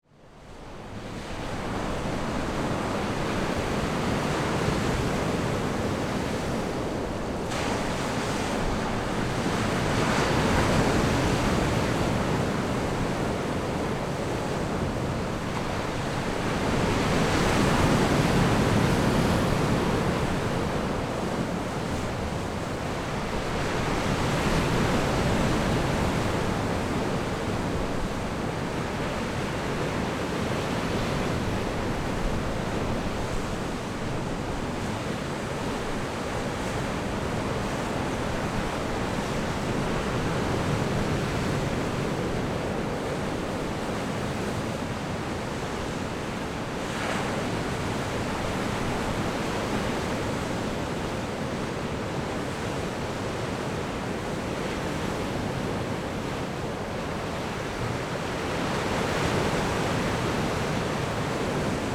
公舘村, Lüdao Township - On the coast
On the coast, Wave
Zoom H6 XY +Rode NT4
Lüdao Township, Taitung County, Taiwan, 30 October 2014